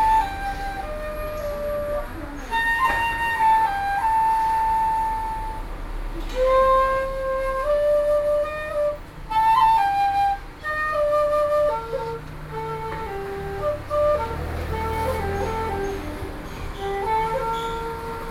Beitou Hot Springs Museum, Taipei City - flute